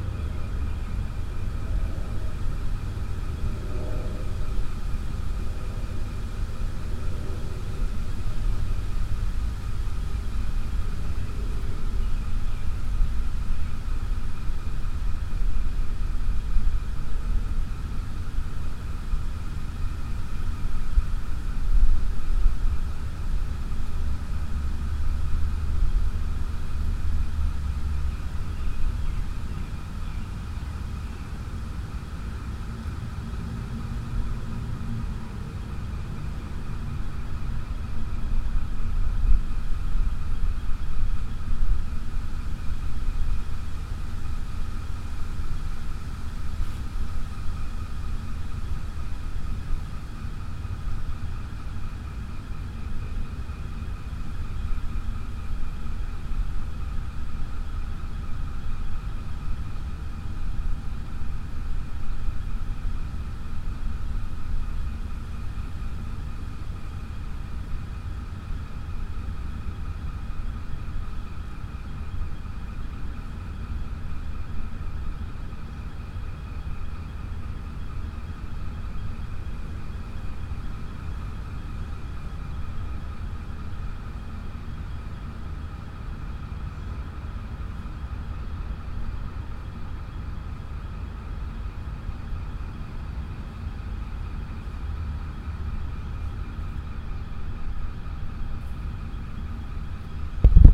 Kelton Street, Boston, MA, USA - Ringer Park Behind Lewis & Gordon Center
Recorded with Zoom H1, equalized in Audacity. An air conditioning fan drones along with birds, an airplane, and rustling trees.